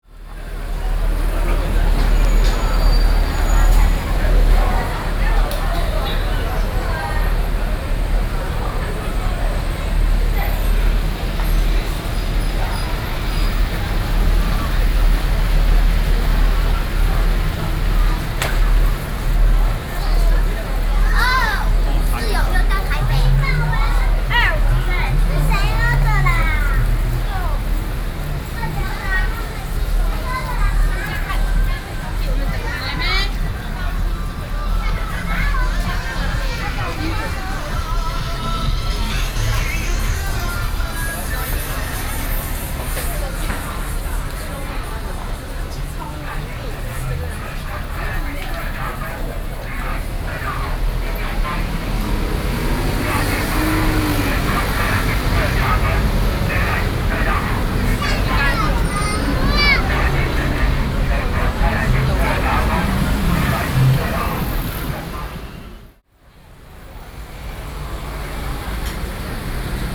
SoundWalk, walking in the Night market, Binaural recordings
Zhongzheng District, Taipei City, Taiwan, 30 June 2012, ~17:00